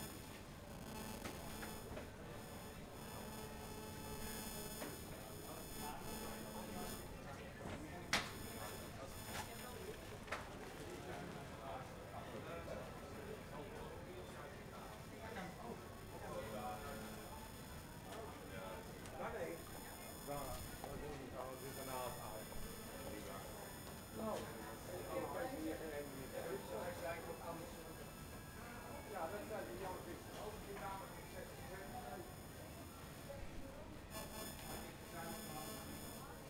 in front of a media market strange noises distort the recording or better: the recording becomes a sensor for the radiation that distorts the sound